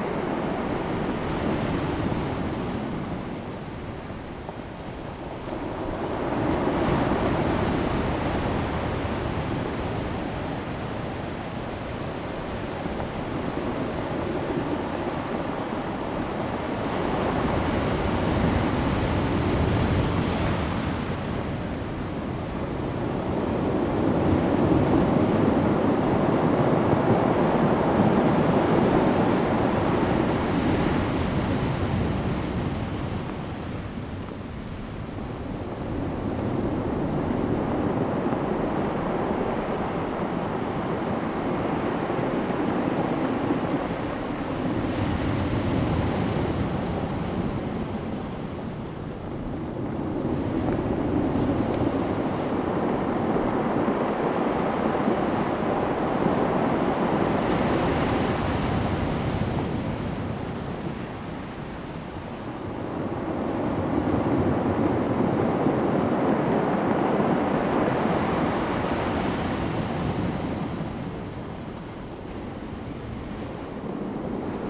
達仁鄉南田海岸, Taitung County - Sound of the waves
Close to the wave, Rolling stones
Zoom H2n MS+XY
Taitung County, Daren Township, 台26線